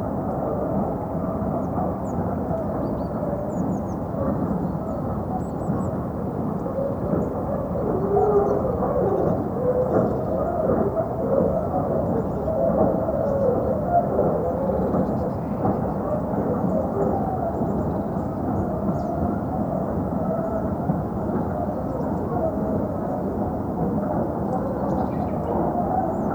Horní Jiřetín, Czech Republic - Distant mine, pervasive sounds, astonishing view
This is one of the most spectacular views in existence. You stand in amongst trees and autumn colours; there are churring tits and woodpeckers. Immediately below is the historic, but run down, Castle Jeziri looking beautiful with yellow stone and dark red tiles, which in turn overlooks the broad North Bohemian plane stretching to green volcanic peaks in the far distance. The plane itself is surreal. The view is dominated by a vast open cast mine where deep pits expose brown coal seams and huge machines squeal and groan as they tear into the earth. Conveyor belt systems roar constantly carrying coal, soil and rocks kilometers across the mines to distant destinations. Elsewhere power stations with smoking, red-banded chimneys dot the landscape and the Unipetrol chemical works at Litvinov with many miles of pipes, cooling towers, storage tanks and flares gleams. The sound is constant, night and day, decade after decade.
20 October 2016